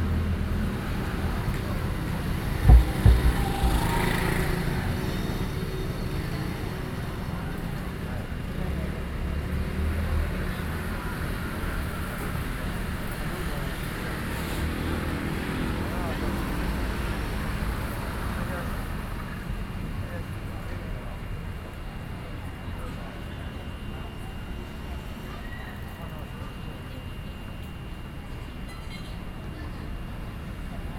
Sanchong, New Taipei city - Corner
New Taipei City, Taiwan, 5 October 2012